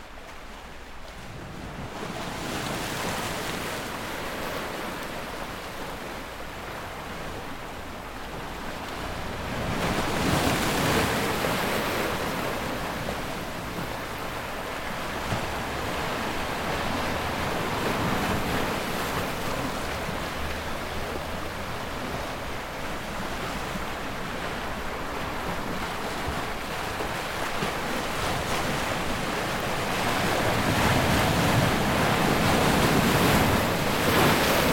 Wave Sound
Captation : ZOOM H6